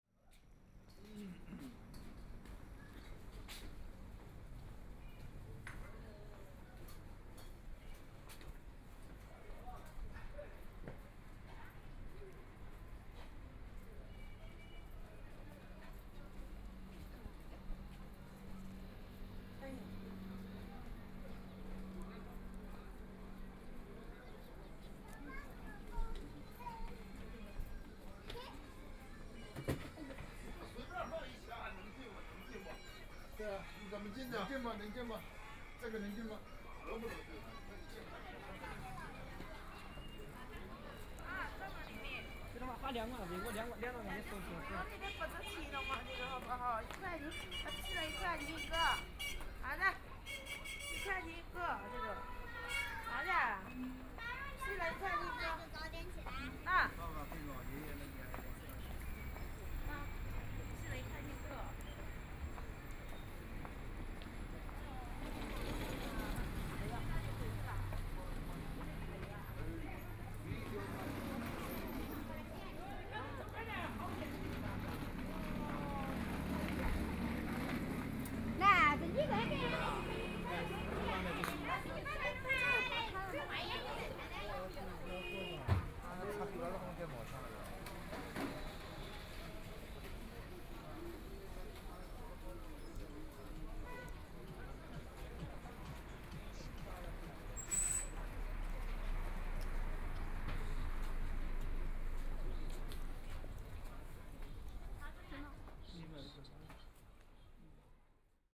Wang Yi Ma Nong, Shanghai - in the old alley
Walking through in the old alley inside, Walking in the narrow old residential shuttle, Binaural recording, Zoom H6+ Soundman OKM II